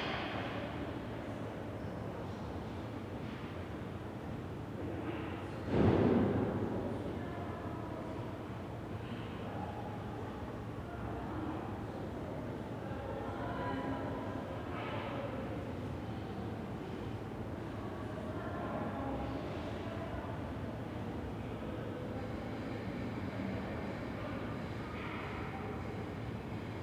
Villa Arson, Avenue Stephen Liegeard, Nice, France - Hallway ambience, voices and footsteps
A group of children in a room nearby, someone whistling, people talking and walking, dropping things somewhere down the corridor.
The walls, floors and ceilings are all made of concrete so reflects sound very well.